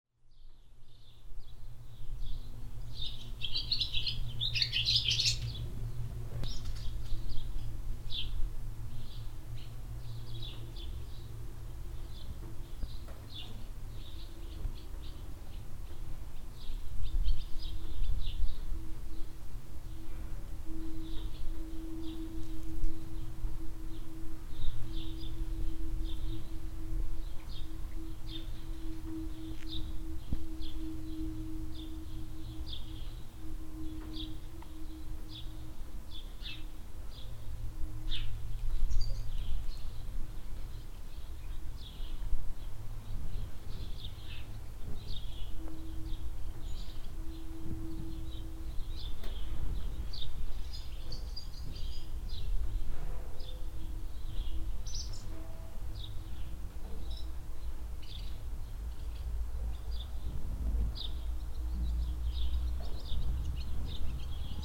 hoscheid, barn yard, swallows
At a barn yard in the centre of the village. Swallows flying under the roof of the shed. Mellow wind. Recorded in the early afternoon in spring time.
Hoscheid, Scheune, Schwalben
In einer Scheune im Ortszentrum. Schwalben fliegen unter das Dach der Hütte. Sanfter Wind. Aufgenommen am frühen Nachmittag im Frühling.
Hoscheid, basse-cour, hirondelles
Dans une basse-cour au centre du village. Des hirondelles volent sous le toit de l’étable. Un vent doux. Enregistré au printemps, en début d’après-midi.
Projekt - Klangraum Our - topographic field recordings, sound art objects and social ambiences